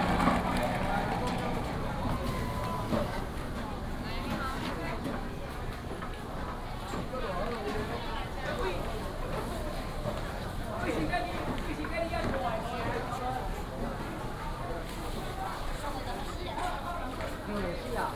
虎尾黃昏市場, Huwei Township - Sunset Market
Walking in the market, Sunset Market